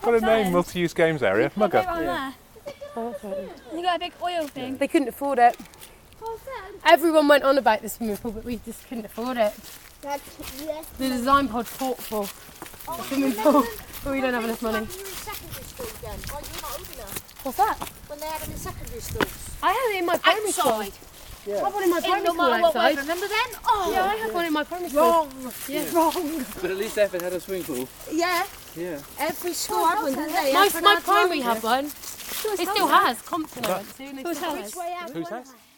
Walk Three: Muga and swimming pool
UK, 4 October 2010